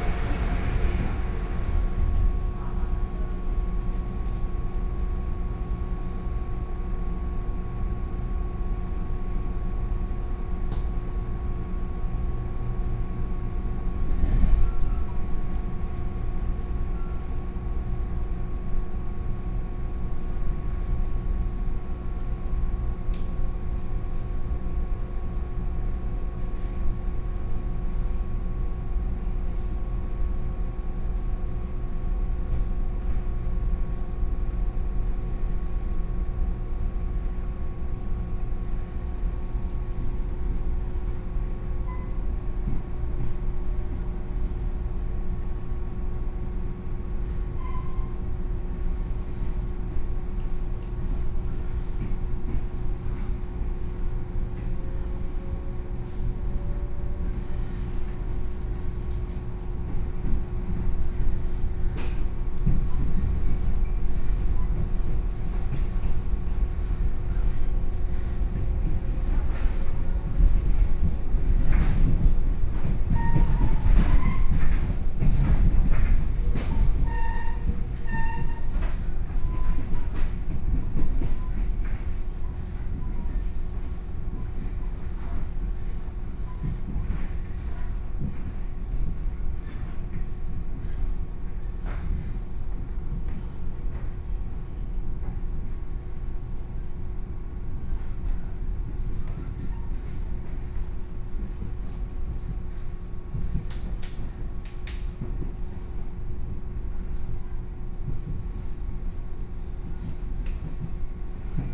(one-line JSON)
{"title": "Su'ao Township, Yilan County - Local Train", "date": "2013-11-07 13:18:00", "description": "Yilan Line, Local Train, from Su'ao Station to Su'aoxin Station, Binaural recordings, Zoom H4n+ Soundman OKM II", "latitude": "24.60", "longitude": "121.84", "altitude": "26", "timezone": "Asia/Taipei"}